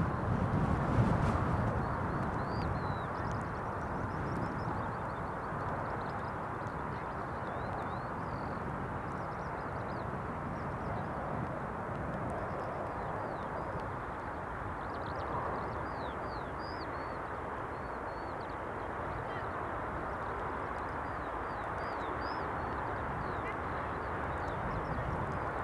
The Drive Moor Place Woodlands Woodlands Avenue Westfield Grandstand Road
A lark sings
from an unseen perch
somewhere in the rough grass
The squall hits
a chocolate labrador comes to explore
Crows criss-cross the grassland below me